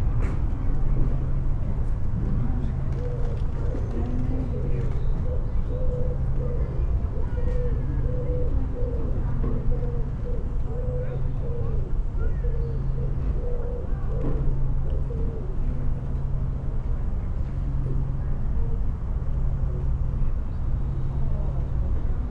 A warm, quiet afternoon in the Priory Park behind the theatres. I sit outside the rear entrance to the foyer concentrating on the passing voices, birds, a jet plane, and children playing far in the distance.
MixPre 6 II with 2 Sennheiser MKH8020s on the table in front of me at head height while I am sitting.
Worcestershire, England, United Kingdom